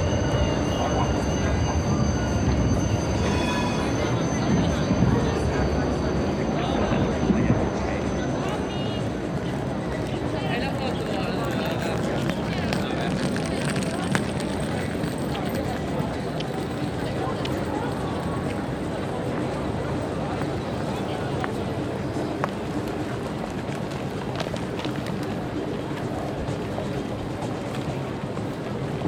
Alexanderplatz, Berlin, Deutschland - Berlin. Alexanderplatz – Berolina-Haus
Standort: Vor dem Berolina-Haus, Blick Richtung Weltzeituhr (Südost).
Kurzbeschreibung: Trams, Passanten, Verkehrsrauschen, in der Ferne S- und Regionalbahnen, Straßenmusiker.
Field Recording für die Publikation von Gerhard Paul, Ralph Schock (Hg.) (2013): Sound des Jahrhunderts. Geräusche, Töne, Stimmen - 1889 bis heute (Buch, DVD). Bonn: Bundeszentrale für politische Bildung. ISBN: 978-3-8389-7096-7
Berlin, Germany